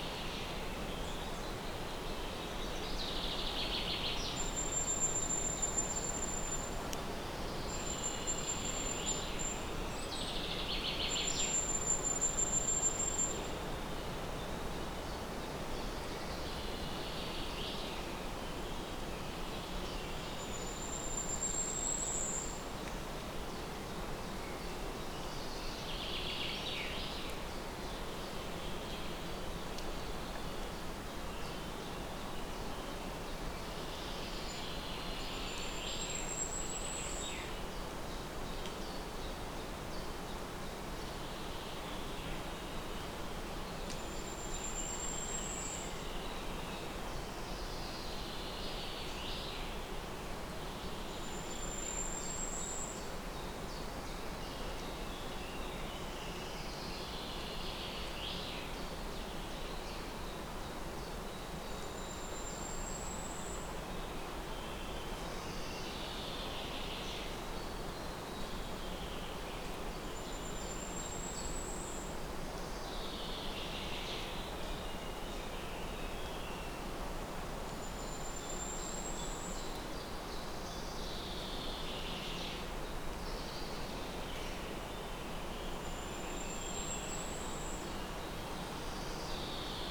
Birds and wind in forestLom Uši Pro, MixPre II.